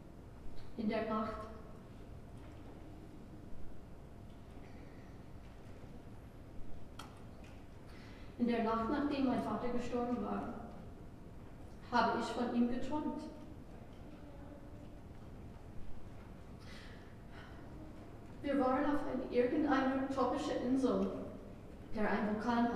neoscenes: Joseph Weizenbaum memorial
Frieder Nake's remembrance
Berlin, Germany, 13 October 2009